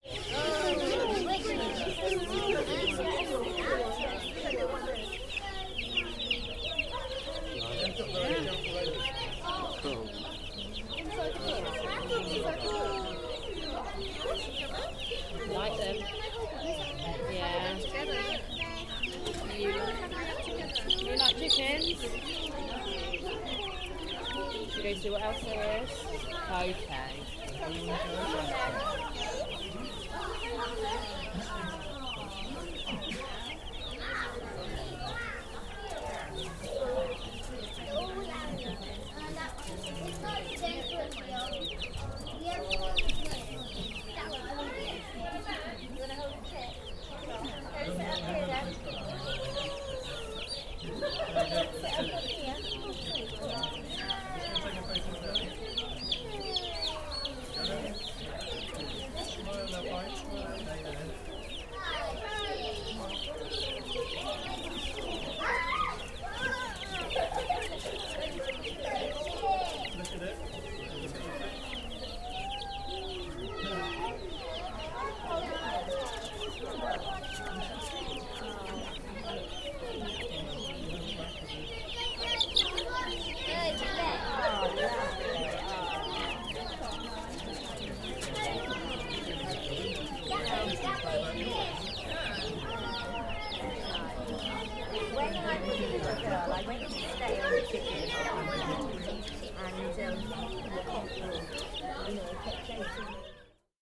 The Lambing Shed, Amners Farm, Burghfield, UK - One of the boys who works on the farm getting into the pen to begin handing out the chicks
One of the boys who works on the farm got into the pen and started handing out the chicks. This is a nice opportunity to hear how the public interact with baby farm animals and I love the tenderness and excitement of the parents and children who have come here to meet the animals.
Reading, UK, 6 May